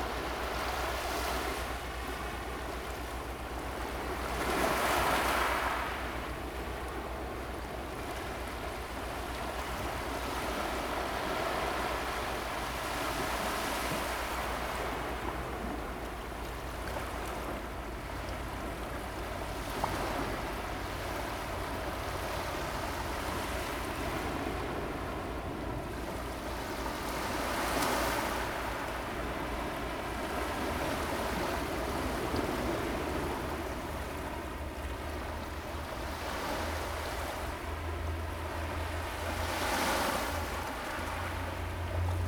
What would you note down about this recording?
Sound of the waves, On the coast, Zoom H2n MS+XY +Sptial Audio